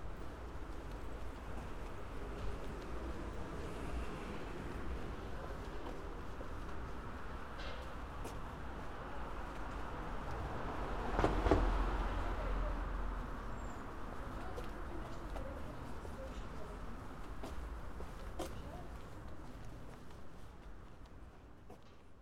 Rijeka, Croatia - Book Caffe Living Room 2
January 2017